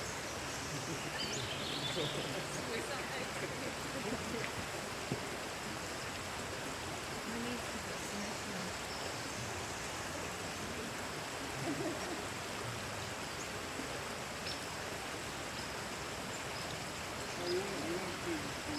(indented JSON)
{
  "title": "Miur Wood hikers, California",
  "description": "morning hikers in Miur Wood valley",
  "latitude": "37.90",
  "longitude": "-122.58",
  "altitude": "47",
  "timezone": "Europe/Tallinn"
}